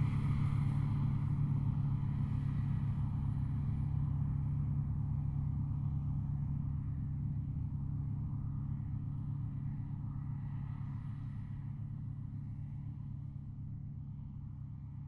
Walhain, Belgique - Combine harvester
A combine harvester in the fields, harvesting the wheat. This is the day, there's machines in the fields everywhere.
Walhain, Belgium, 15 August 2016, 13:30